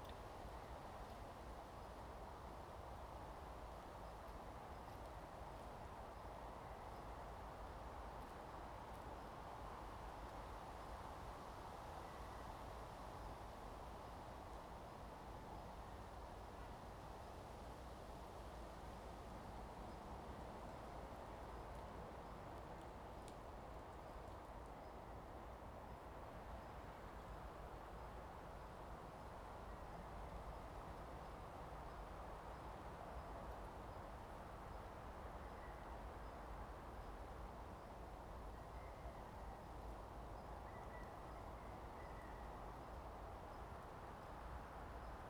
陽沙路, Jinsha Township - Forest and Wind
Forest and Wind, Chicken sounds
Zoom H2n MS+XY